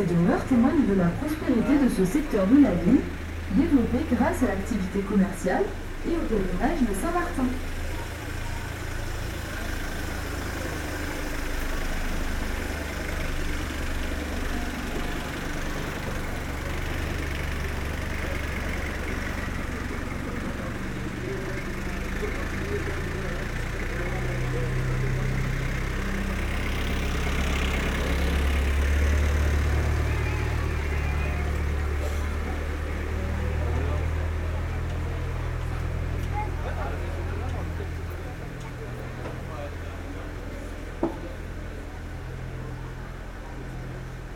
Tours, France - Touristic atmosphere in the Colbert street
Touristic atmosphere in the Colbert street, an alive and noisy street where visitors are walking along the bars and the restaurants. Sound of the small touristic train and drunk bums.